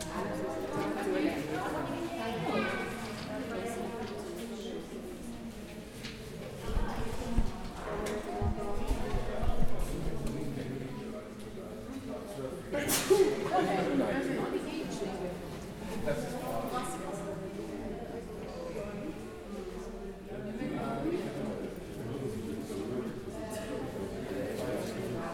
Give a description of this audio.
Warteposition für die Abfahrt, niemand darf früher rein in den Bus, riesige Köffer